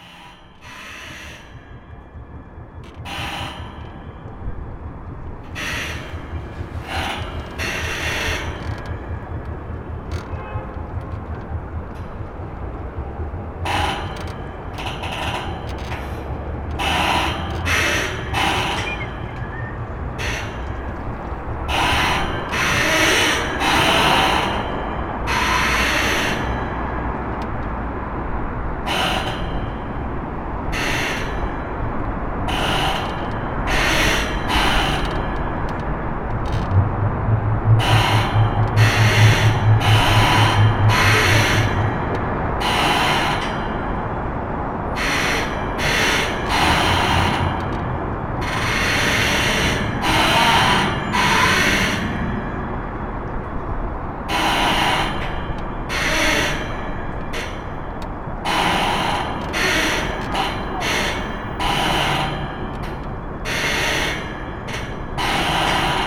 København, Denmark - Pontoon creaking
Sounds of a pontoon creaking at the mercy of the waves. It's near the most traveled bridge of the city.